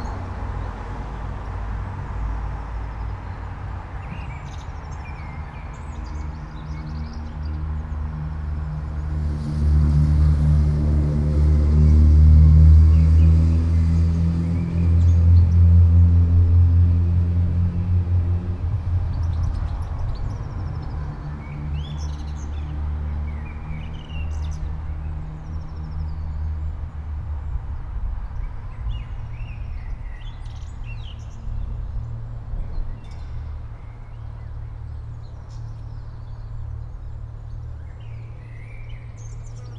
all the mornings of the ... - apr 28 2013 sun